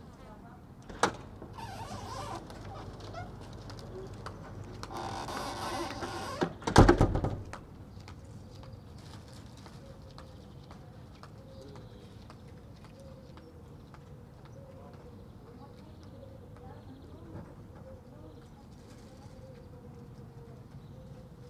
Epicerie du Platane, Niévroz, France - Place ambience, 11am.
cars, bells, door slamming.
Tech Note : Sony PCM-M10 internal microphones.